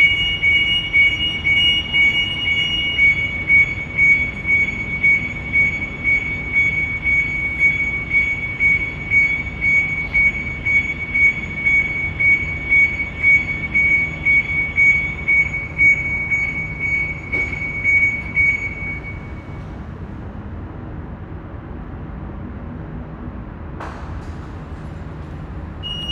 Fitjar, Norwegen - Storholmen, ferry departure
Inside the ferry from Storholmen to Hahljem after the start. A short announcement floowed by the engine sound and followed by a another norwegian annnouncement. Then car alarm signs initiated by the shaking of the boat.
international sound scapes - topographic field recordings and social ambiences
Fitjar, Norway